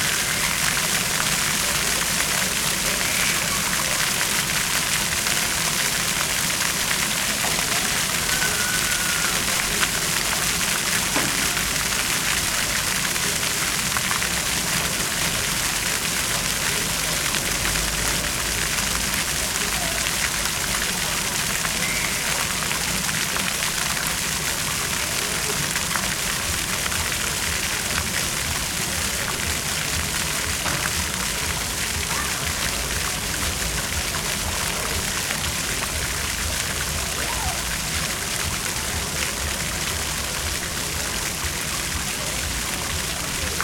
Bevkov Trg, Nova Gorica, Slovenia - Fountain in the city 01
The sound of the water of the fountain in the main square in Nova Gorica.
6 June 2017